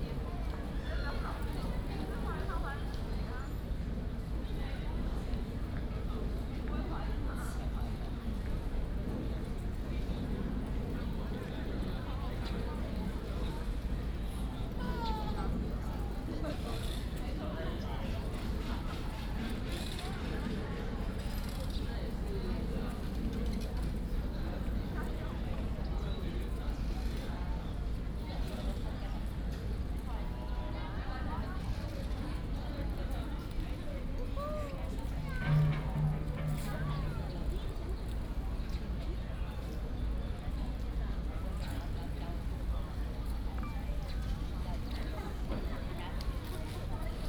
小小福, National Taiwan University - At the university
At the university, Bicycle sound, Footsteps
Taipei City, Taiwan